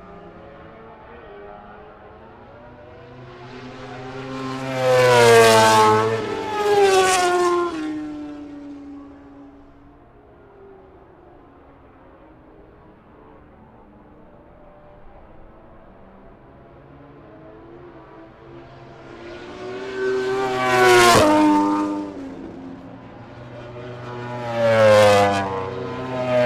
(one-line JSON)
{"title": "Unnamed Road, Derby, UK - British Motorcycle Grand Prix 2004 ... moto grandprix ...", "date": "2004-07-23 13:50:00", "description": "British Motorcycle Grand Prix 2004 qualifying ... part one ... one point stereo mic to minidisk ...", "latitude": "52.83", "longitude": "-1.37", "altitude": "74", "timezone": "Europe/London"}